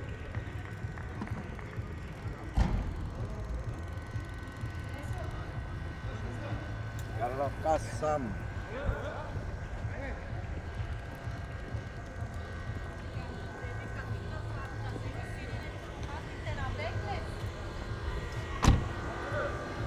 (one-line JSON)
{"title": "Rue des Faures, Bordeaux, France - Saturday night under covid-19", "date": "2020-04-04 20:00:00", "description": "A walk in Bordeaux a saturday night.\n8:00 p.m. applause. Almost empty streets. Only the poorer people are outside. 5 magpies.\nRecorded with a pair of LOM Usi pro and Zoom H5.\n40 minutes of recording cut and edited.", "latitude": "44.83", "longitude": "-0.57", "altitude": "14", "timezone": "Europe/Paris"}